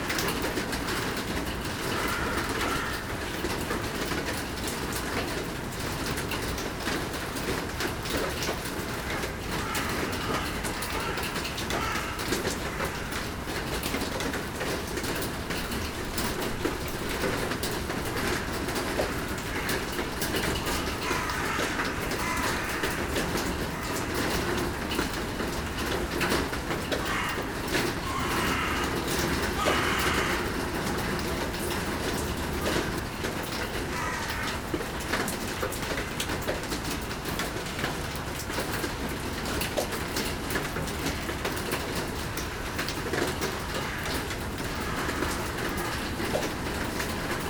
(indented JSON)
{
  "title": "Seraing, Belgique - The coke plant",
  "date": "2017-03-18 15:00:00",
  "description": "In the abandoned coke plant, walking in the \"tar\" section of the factory, while rain is falling. Everything here is dirty and polluted.",
  "latitude": "50.61",
  "longitude": "5.53",
  "altitude": "66",
  "timezone": "Europe/Brussels"
}